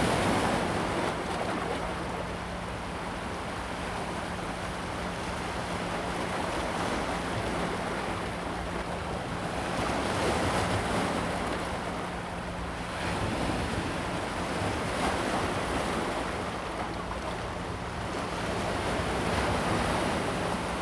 淡水觀海長提, New Taipei City - tide
On the banks of the river, tide, yacht
Zoom H2n MS+XY
New Taipei City, Taiwan, 5 January 2017